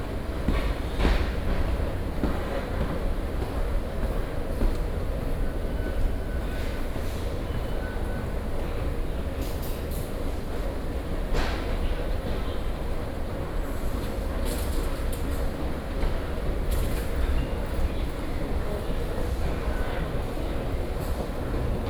Xinpu Station, Banqiao District - walking into the MRT station
walking into the MRT station
Binaural recordings, Sony PCM D50
New Taipei City, Banqiao District, 東西向快速公路八里新店線